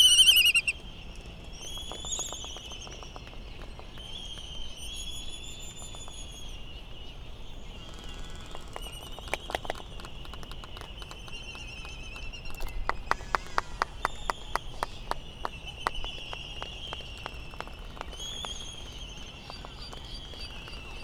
Laysan albatross soundscape ... Sand Island ... Midway Atoll ... laysan calls and bill clapperings ... white tern calls ... open lavalier mics ... warm ... slightly blustery morning ...